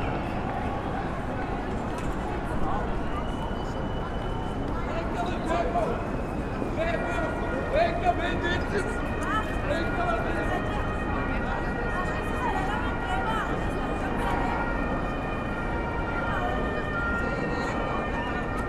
berlin: hermannplatz - the city, the country & me: 1st may riot
police cars, vans, trucks and water guns waiting on the revolution, chanting demonstrators, police helicopters, sound of police sirens and bangers, people leaving and entering the subway station
the city, the country & me: may 1, 2011
Berlin, Germany, 1 May 2011, 20:41